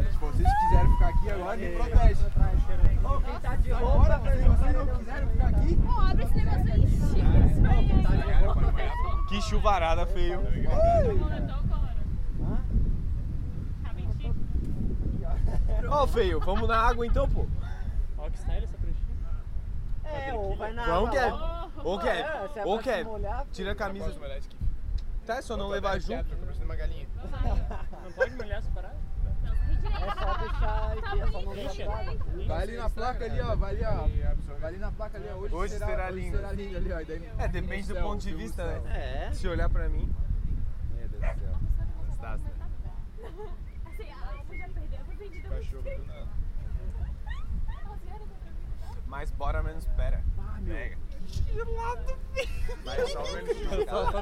Lagoinha do Leste, Florianópolis, Santa Catarina, Brazil - Chating during the rain at Lagoinha do Leste beach
After a stormy night, a group of friends that were camping at Lagoinha do Leste (Little East Lagoon) - Brazil are chating about the rain that still falls and decide to enter the lagoon during the rain, you can hear the splash sound.